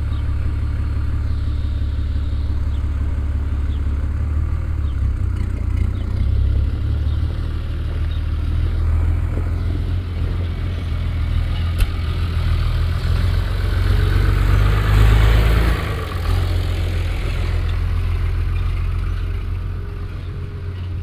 On the main street of the small town on a warm summer and mellow windy evening.
The sound of a private garden fountain, swallows in the sky, some working noise from a nearby barn yard and a tractor passing by.
Unfortunately some wind disturbances
Merscheid, Rue de Wahlhausen
Auf der Hauptstraße des kleinen Ortes an einem warmen und milden windigen Sommerabend. Der Geräusch von einem privaten Gartenspringbrunnen, Schwalben in der Luft, etwas Arbeitslärm von einer nahen Scheune und ein Traktor, der vorbei fährt. Leider einige Windstörungen.
Merscheid, rue de Wahlhausen
Sur la route principale de la petite ville, le soir d’une chaude et douce journée d’été venteuse.
Le bruit d’une fontaine privée dans un jardin, des hirondelles dans le ciel, le bruit de travaux dans une basse-cour proche et un tracteur qui passe. Malheureusement avec les perturbations sonores du vent.
Project - Klangraum Our - topographic field recordings, sound objects and social ambiences
Putscheid, Luxembourg, August 2011